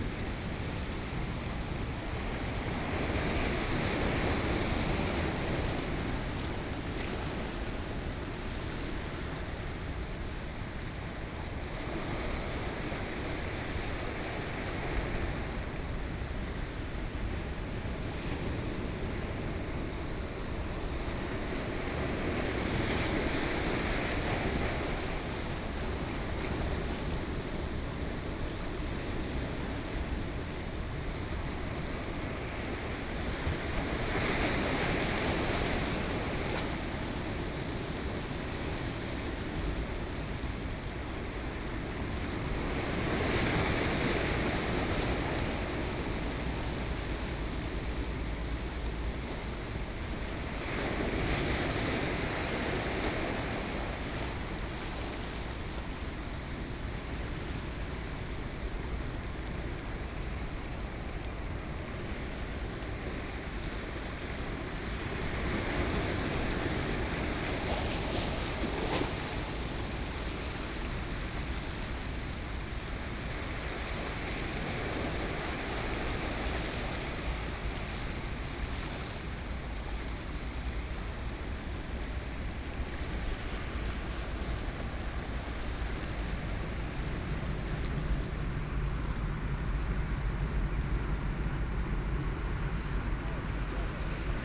{"title": "頭城鎮大里里, Yilan County - On the coast", "date": "2014-07-21 16:49:00", "description": "On the coast, Sound of the waves, Very hot weather\nSony PCM D50+ Soundman OKM II", "latitude": "24.95", "longitude": "121.91", "altitude": "1", "timezone": "Asia/Taipei"}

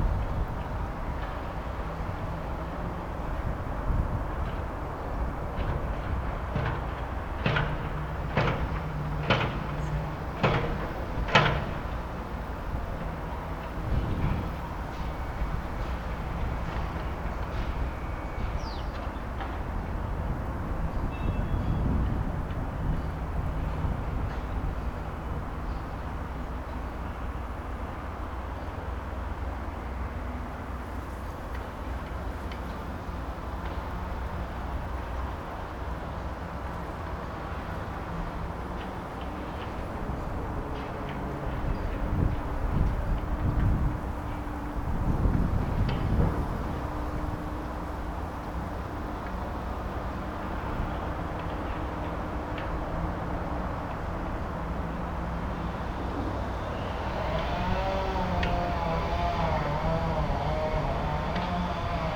{"title": "Poznan, Piatkowo distrtict - construction site form the distance", "date": "2012-09-20 11:30:00", "description": "a construction site recorded form a distance of a few hundred meters. lots of different sounds.", "latitude": "52.46", "longitude": "16.90", "altitude": "101", "timezone": "Europe/Warsaw"}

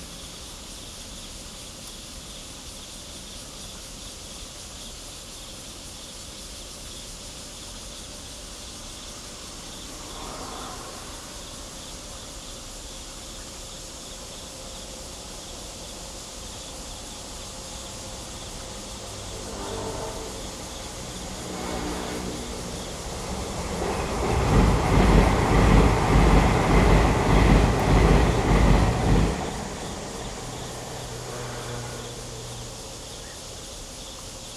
{"title": "社子溪, Yangmei Dist., Taoyuan City - Next to the stream", "date": "2017-08-11 18:31:00", "description": "Next to the stream, Traffic sound, Insects, Cicadas, The train passes by, There is factory noise in the distance, Zoom H2n MS+XY", "latitude": "24.92", "longitude": "121.11", "altitude": "126", "timezone": "Asia/Taipei"}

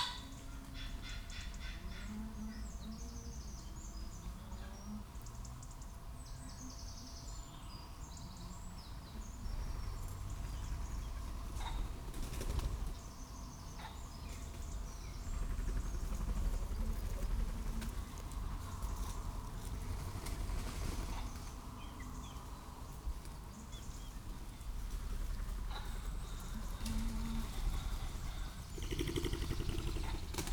{"title": "Green Ln, Malton, UK - Pheasants coming to roost ...", "date": "2017-11-04 16:40:00", "description": "Pheasants coming to roost ... open lavalier mics clipped to sandwich box on tree trunk ... bird calls from robin ... blackbird ... crow ... tawny owl ... plenty of background noise ... females make high pitched peeps ... males hoarse calls ... and plenty of whirrings and rattling of wings when they fly to roost ...", "latitude": "54.12", "longitude": "-0.57", "altitude": "97", "timezone": "Europe/London"}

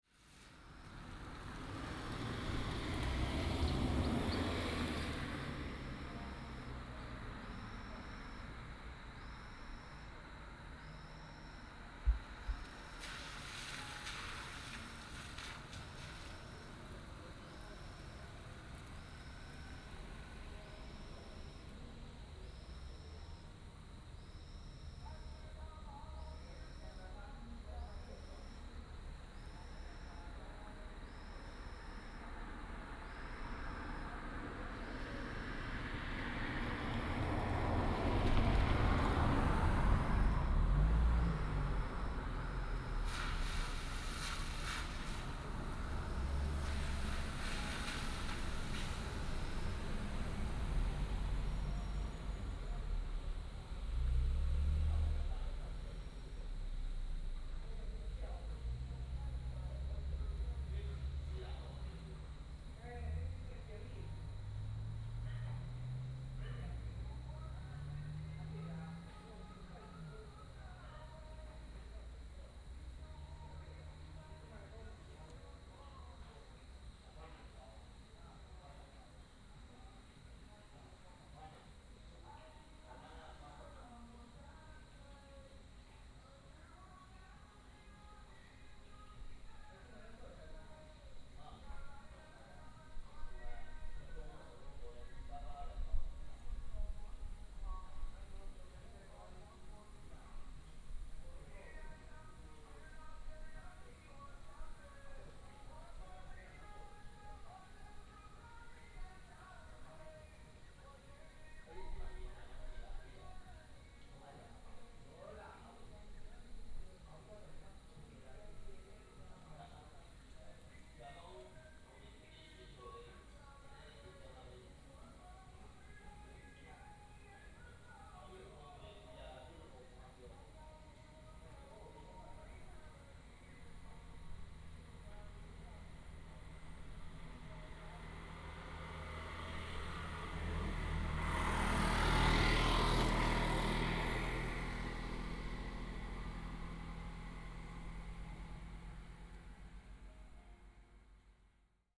{"title": "牡丹鄉199縣道, Pingtung County - In the bifurcation of the road", "date": "2018-04-23 11:58:00", "description": "In the bifurcation of the road, Traffic sound", "latitude": "22.18", "longitude": "120.85", "altitude": "281", "timezone": "Asia/Taipei"}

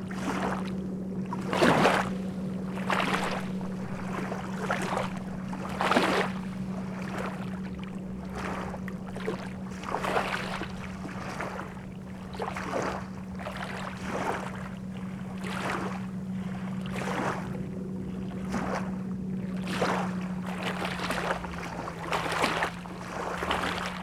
{"title": "Molėtai, Lithuania, at the lake Bebrusai", "date": "2012-06-30 16:50:00", "latitude": "55.20", "longitude": "25.47", "timezone": "GMT+1"}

